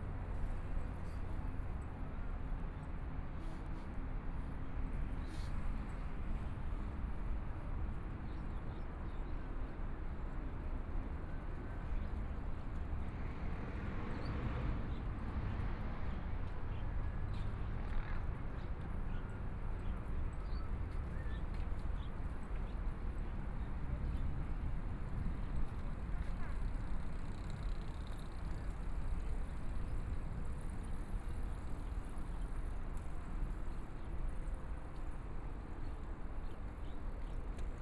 中山區圓山里, Taipei City - Walking along the river
Walking along the river, Pedestrian, Traffic Sound, A lot of people riding bicycles through
Binaural recordings, ( Proposal to turn up the volume )
Zoom H4n+ Soundman OKM II